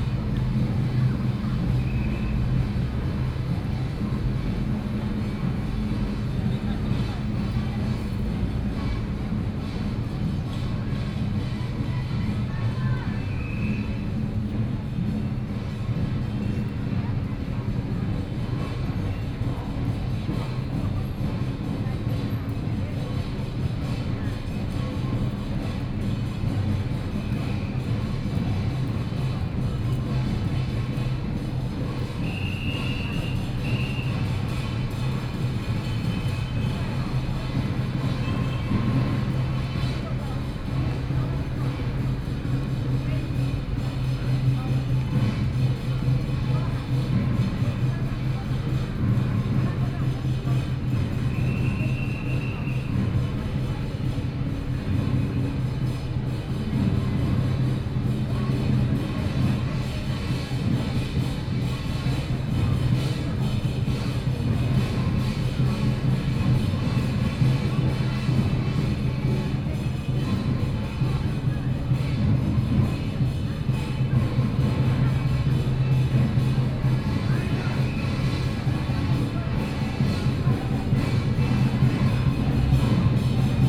Daye Rd., Beitou Dist. - festival
Community Carnival festival, Eastern traditional temple percussion performances form, Western-style combat performance teams
Taipei City, Taiwan, 3 November 2013, 13:22